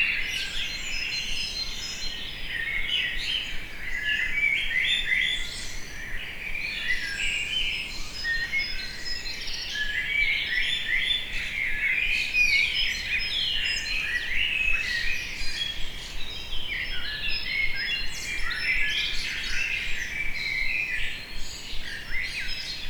Innstraße, Innsbruck, Österreich - Tropical St. Nikolaus Bird a lot
vogelweide, waltherpark, st. Nikolaus, mariahilf, innsbruck, stadtpotentiale 2017, bird lab, mapping waltherpark realities, kulturverein vogelweide, morgenstimmung vogelgezwitscher, bird birds birds, tropical innsbruck
19 June, 04:44